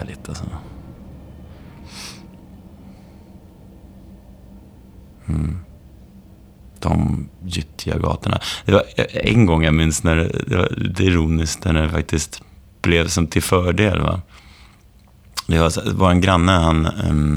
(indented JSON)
{
  "title": "Storgatan, Tranås, Sweden - Topology of Homecoming",
  "date": "2019-07-12 20:57:00",
  "description": "Topology of Homecoming\nImagine walking down a street you grew\nup on. Describe every detail you see along\nthe way. Just simply visualise it in your mind.\nAt first your walks will last only a few minutes.\nThen after a week or more you will remember\nmore details and your walks will become longer.\nFive field recordings part of a new work and memory exercise by artist Stine Marie Jacobsen 2019.\nStine Marie Jacobsen visited the Swedish city Tranås in spring 2019 and spoke to adult students from the local Swedish language school about their difficulties in learning to read and write for the first time through a foreign language. Their conversations lead her to invite the students to test an exercise which connects the limited short term memory with long term memory, which can store unlimited amounts of information.\nBy creating a stronger path between short and long term memory, perhaps more and new knowledge will symbolically and dynamically merge with one’s childhood street and culture.",
  "latitude": "58.03",
  "longitude": "14.97",
  "timezone": "GMT+1"
}